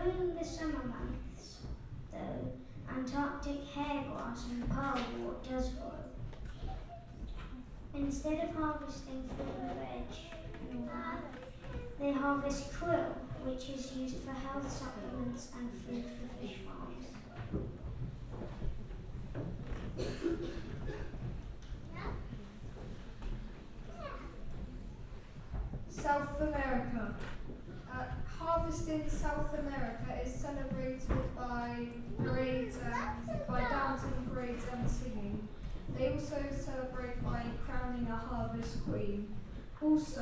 Red Lane Cottage, Oxford Rd, Reading, UK - Harvest at St Leonards
Sitting with other parents and their young children in the rear gallery of St Leonards Church for harvest festival celebrations with pupils from the local primary school. (Binaural Luhd PM-01s on Tascam DR-05)